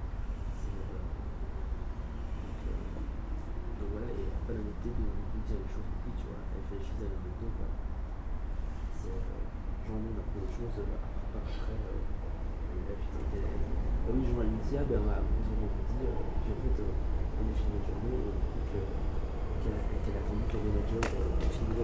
Rixensart, Belgique - Genval station
The Genval station. Someone is phoning just near me and a few time after the train arrives. Passengers board inside the train ; it's going to Brussels. A few time after, a second train arrives. It's not stopping in the station.